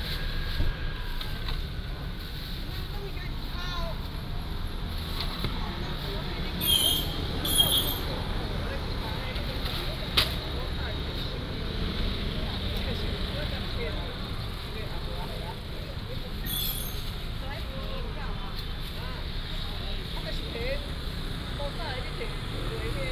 Xinxing Rd., Shengang Township - Fishmonger
Fishmonger, Scrape off scales, The sound of birds, Traffic sound, Vendors
Changhua County, Taiwan